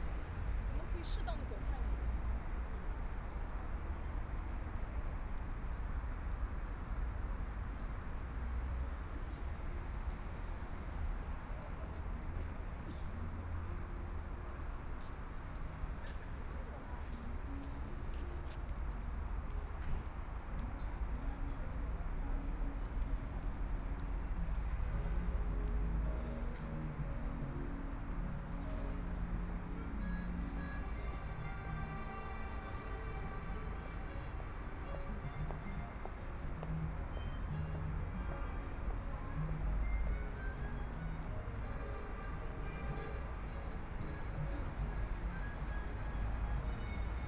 楊浦區五角場, Shanghai - in the Street
in the Street, traffic sound, Binaural recording, Zoom H6+ Soundman OKM II
20 November, ~00:00